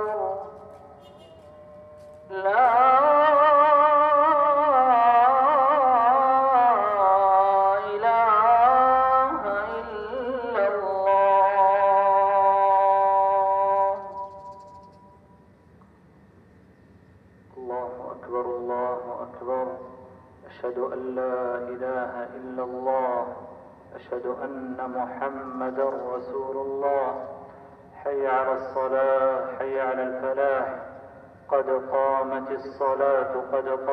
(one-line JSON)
{"title": "Askar, Bahreïn - Mosquée Asker South - Askar - Bahrain", "date": "2021-05-29 18:21:00", "description": "Appel à la prière de 18h21 - Mosquée Asker South - Askar - Bahrain", "latitude": "26.06", "longitude": "50.62", "altitude": "3", "timezone": "Asia/Bahrain"}